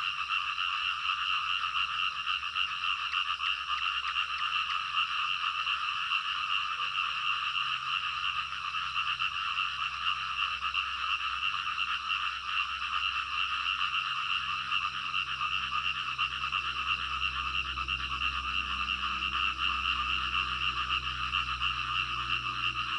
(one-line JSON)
{"title": "種瓜路, 埔里鎮桃米里 - Frogs sound", "date": "2015-06-11 04:11:00", "description": "Frogs chirping, Early morning\nZoom H2n MS+XY", "latitude": "23.94", "longitude": "120.92", "altitude": "503", "timezone": "Asia/Taipei"}